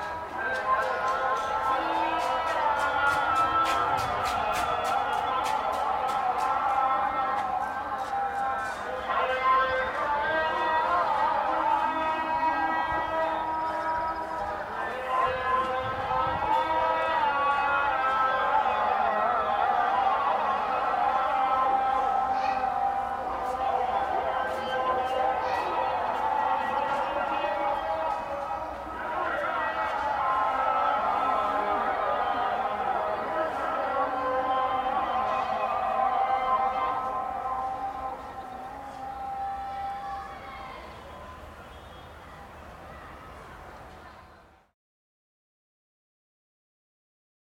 muezzins istanbul - Istanbul, muezzins
choir of the muezzins of istanbul, evening prayer, may 2003. - project: "hasenbrot - a private sound diary"